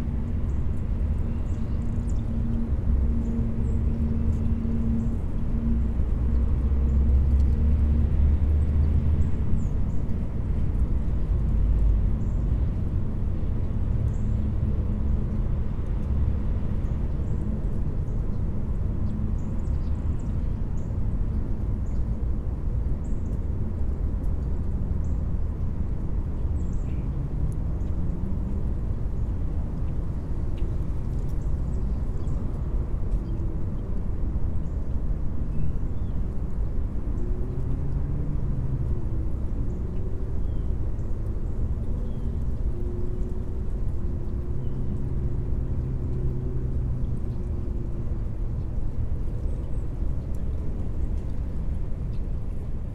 Glover St SE, Marietta, GA, USA - Perry Parham Park - Rottenwood Creek
Recording along a section of Rottenwood Creek that runs through Perry Parham Park. Nobody else was present in the park, probably because of the time of week. The creek is so low and calm that you can barely hear it, with only a couple of tiny trickles being audible over the sounds of the surrounding area. Lots of dry leaves are heard blowing in the wind, as well as some birds. This area is defined by a constant hum of traffic.
[Tascam Dr-100mkiii & Primo EM272 omni mics)
Georgia, United States, 17 February 2021